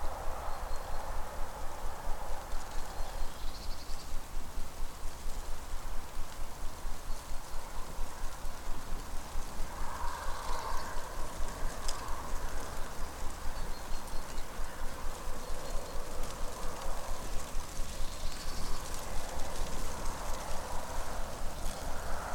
Šlavantai, Lithuania - Rustling leaves, ambience
Gentle ambience, sounds of leaves rustling, bird calls and occasional reverberations from cars passing by on the other side of the lake. Recorded with ZOOM H5.
Alytaus apskritis, Lietuva, 19 March 2021